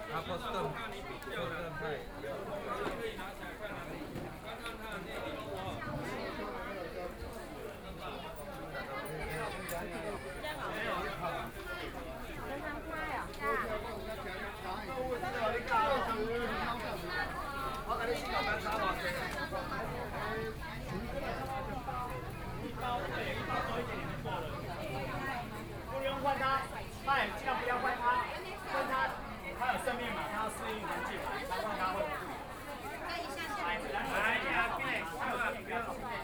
Qingshui St., Tamsui Dist. - Traditional Market
Walking through the traditional market, Market within a very narrow alley, Binaural recordings, Zoom H6+ Soundman OKM II
Danshui District, New Taipei City, Taiwan, 17 November